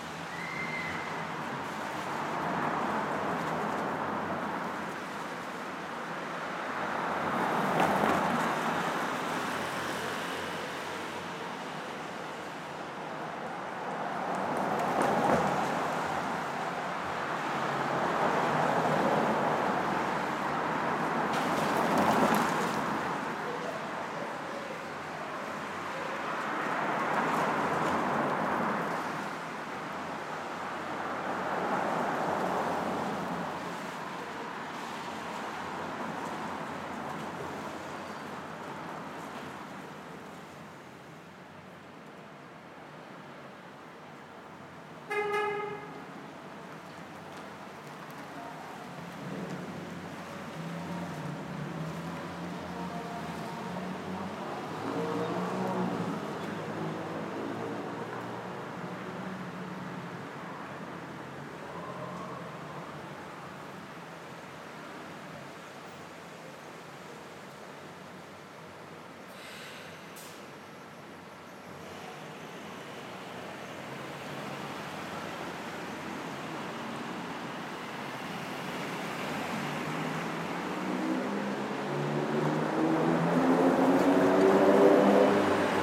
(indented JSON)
{
  "title": "Myrtle Av/Fresh Pond Rd, Queens, NY, USA - Traffic in Myrtle Av/Fresh Pond Rd",
  "date": "2022-03-12 16:50:00",
  "description": "Sounds of traffic at the intersection between Fresh Pond Road and Myrtle Avenue.",
  "latitude": "40.70",
  "longitude": "-73.89",
  "altitude": "25",
  "timezone": "America/New_York"
}